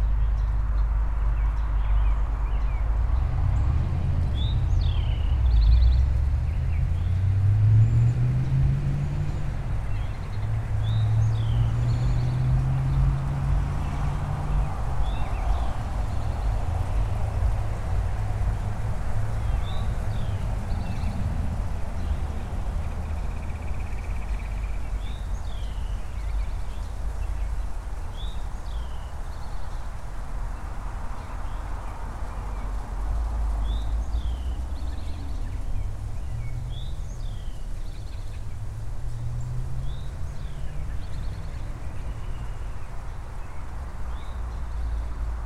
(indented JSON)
{
  "title": "Pendergrast Park, Chrysler Dr NE, Atlanta, GA, USA - Picnic Table At Pendergrast Park",
  "date": "2021-01-23 16:38:00",
  "description": "A picnic table in Pendergrast Park. The soundscape here is a mix of heavy traffic sounds and bird calls. Other sounds can be heard throughout, such as the wind rustling dead leaves on a tree behind the recorder to the right. The traffic here is more prominent than it is in the woods.\n[Tascam Dr-100 Mkiii & Primo EM-272 omni mics]",
  "latitude": "33.84",
  "longitude": "-84.30",
  "altitude": "306",
  "timezone": "America/New_York"
}